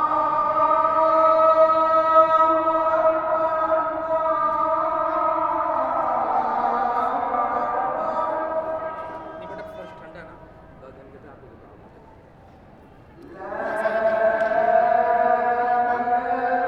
Walled City, Lahore, Pakistan - Badshahi Mosque Call for Prayer
Sony PCM D-100, internal mics, part of the call for prayer, inside the courtyard of the huge mosque